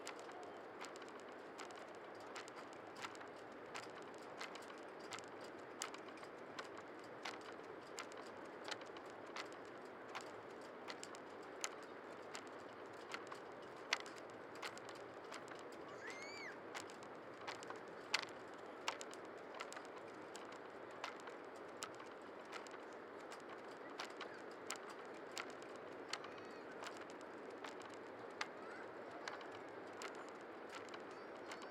Rue Arlette Davids, Wissant, France - Wissant (Pas-de-Calais - Côte d'Opale)
Wissant (Pas-de-Calais - Côte d'Opale)
Milieu d'après-midi
le vent fait "claquer" les cordes sur les mats (bois et métal) des bateaux.
ZOOM F3 + Neumann KM 184